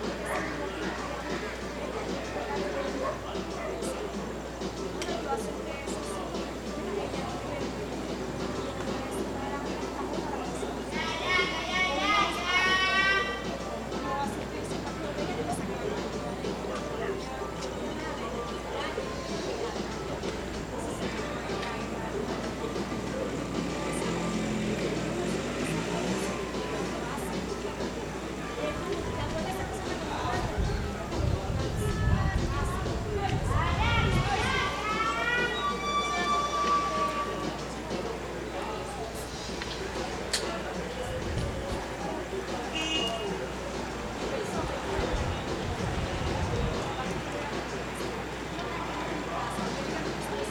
Santiago de Cuba, street at night
10 December, 22:00